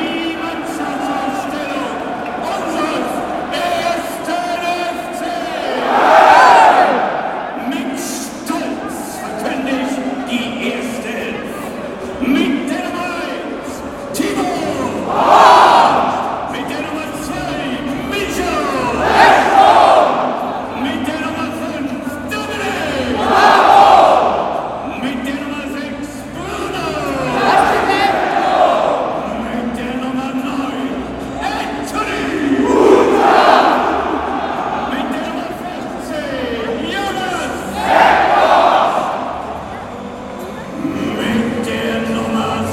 Football match of FC Köln vs. FC Kaiserslautern in the Rhein-Energie-Stadium, shortly before the start. After the team line up by the stadium speaker the fans (ca. 45.000 people) sang out the hymn of the footballclub of Cologne